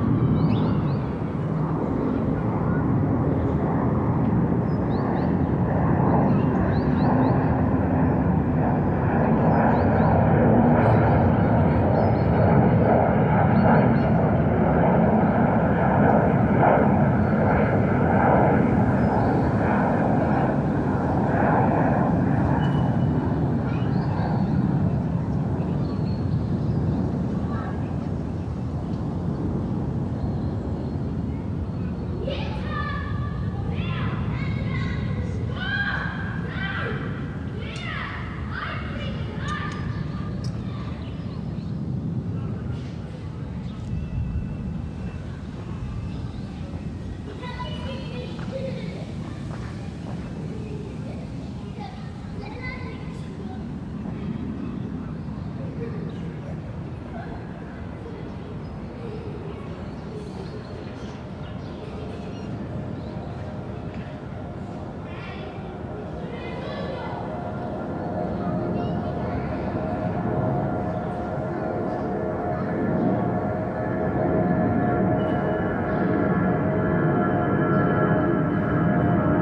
Wollankstraße, Berlin, Deutschland - Wollankstraße 57A-D, Berlin - backyard facade with balconies, airplanes
Wollankstraße 57A-D, Berlin - backyard facade with balconies, airplanes.
The day after I met Roberto, Toni and Tyson here, three yound teenagers from the neigbourhood. Two of them turned out to be astonishingly skilled beat box artists.
[I used the Hi-MD-recorder Sony MZ-NH900 with external microphone Beyerdynamic MCE 82]
Wollankstraße 57A-D, Berlin - Hinterhoffassade mit Balkons, Flugzeuge.
Einen Tag später traf ich am selben Ort Roberto, Toni und Tyson, drei Jungs aus der Nachbarschaft.
[Aufgenommen mit Hi-MD-recorder Sony MZ-NH900 und externem Mikrophon Beyerdynamic MCE 82]